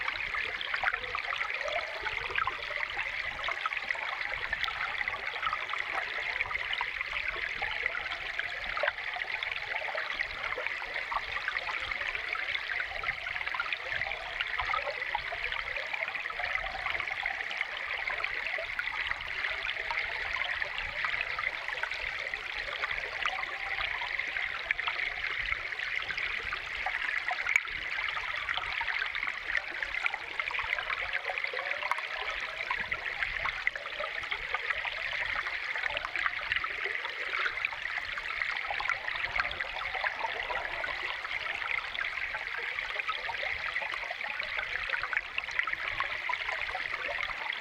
1745 N Spring Street #4 - Water Spring on Owens Lake
Metabolic Studio Sonic Division Archives:
Water spring on Owens Dry Lake. Recorded with H4N stereo microphones and 1 underwater microphone
CAL Fire Southern Region, California, United States, 24 August, 12:00